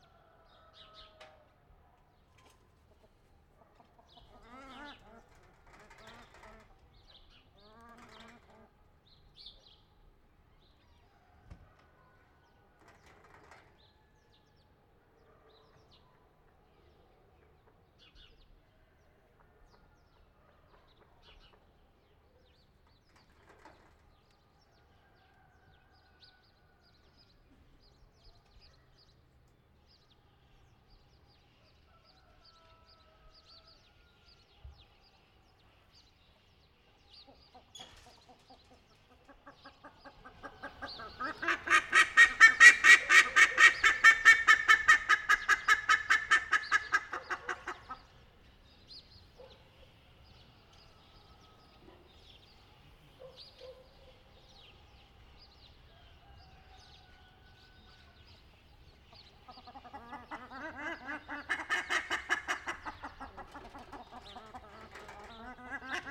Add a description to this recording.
This is a recording of Corral, by morning in a quiet street. I used Sennheiser MS microphones (MKH8050 MKH30) and a Sound Devices 633.